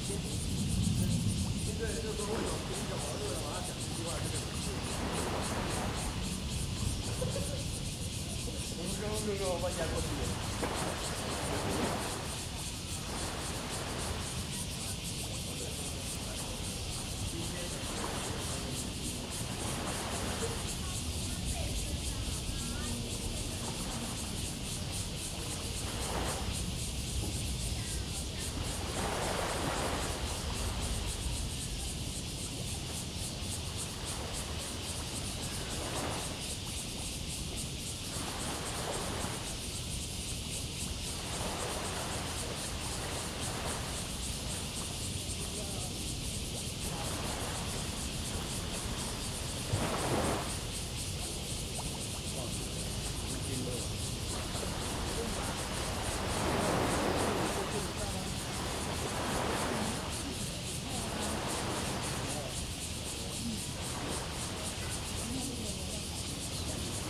榕堤, Tamsui Dist., New Taipei City - On the river bank
On the river bank, Upcoming typhoon, Cicadas cry, Sound tide, Aircraft flying through
Zoom H2n MS+XY
7 August 2015, ~18:00